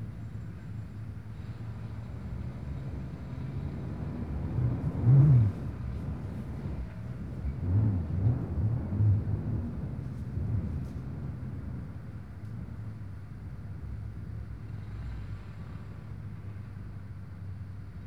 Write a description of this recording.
wind forcing its way into the apartment through a narrow slit in the window frame, creating mumbling and rumbling growls. construction works on the outside.